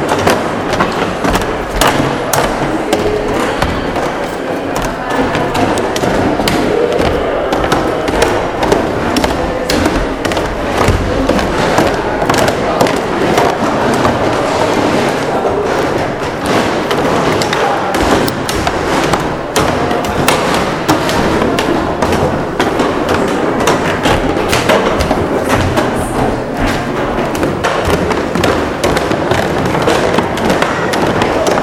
R. Luís de Camões, Rio de Janeiro, Brazil - Centro Municipal de Artes Hélio Oiticica
Festival Multiplicidade - Chegada ao Happening.
Rio de Janeiro, Brasil